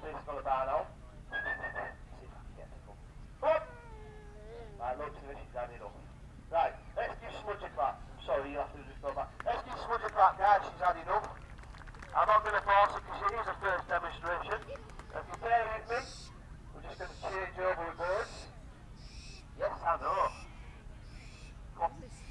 Burniston, UK - Falconry display ... Burniston and District Show ...
Smudge the American barn owl ... falconer with radio mic through the PA system ... lavalier mics clipped to baseball cap ... warm sunny morning ...